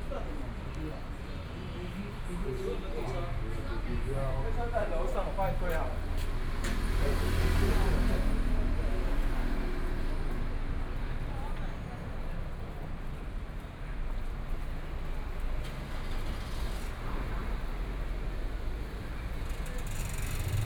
walking on the road, Through a variety of different shops, Walking towards the south direction
Please turn up the volume a little
Binaural recordings, Sony PCM D100 + Soundman OKM II
Taipei City, Taiwan, 28 February